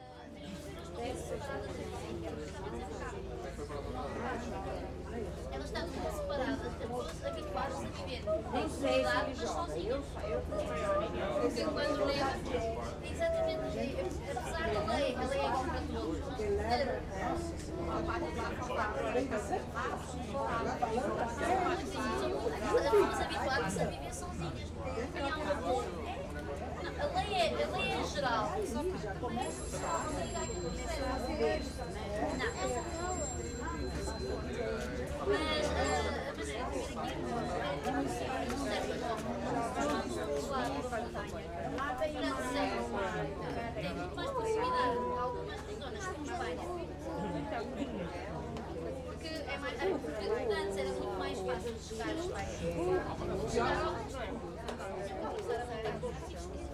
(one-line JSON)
{"title": "Pinhão, Estacao, Portugal - Estacao ferroviaria do Pinaho", "date": "2010-07-20 11:30:00", "description": "Estacao ferroviaria do Pinhao, Portugal. Mapa Sonoro do rio Douro. Pinhao railway station. Douro, Portugal. Douro River Sound Map", "latitude": "41.19", "longitude": "-7.54", "altitude": "87", "timezone": "Europe/Lisbon"}